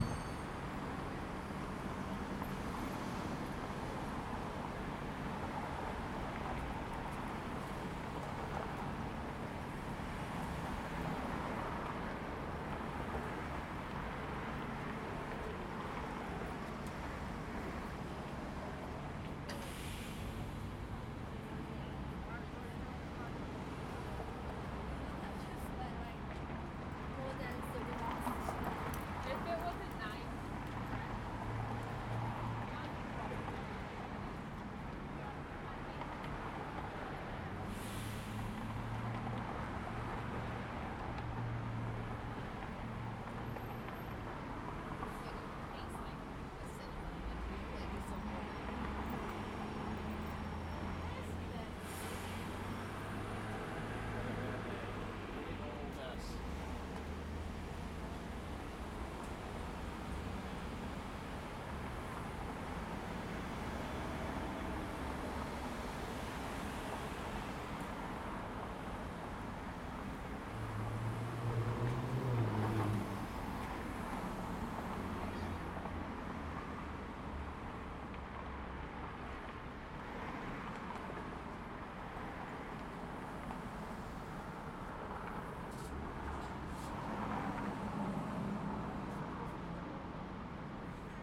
Pasadena, Kalifornien, USA - LA - pasadena intersection
LA - pasadena intersection, colorado / los robles; traffic and passengers;